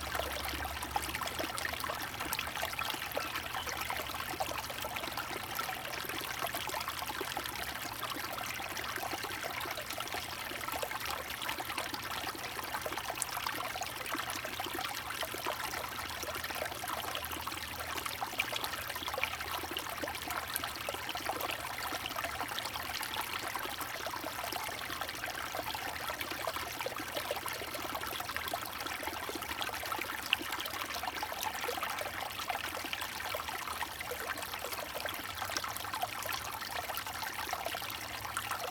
sound of the Flow
Zoom H2n MS+XY
Puli Township, 桃米巷52-12號, 24 March 2016